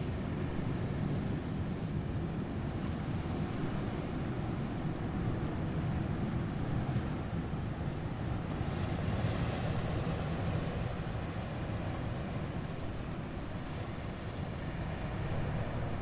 Oileán Chléire, Cape Clear Island, beach on north side. - Sizeable swell and wind with the odd bird passing
Recorded with Zoom H4 and Rycote windshield. There was a sizeable swell pounding the rocks in the distance and the closer lapping of the waves in the sheltered bay nearby.